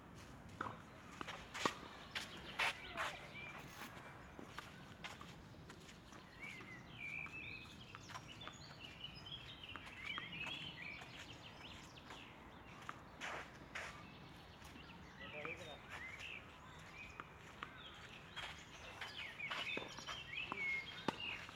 Spiel, Linienflugzeug, Strassenbahn, Zug.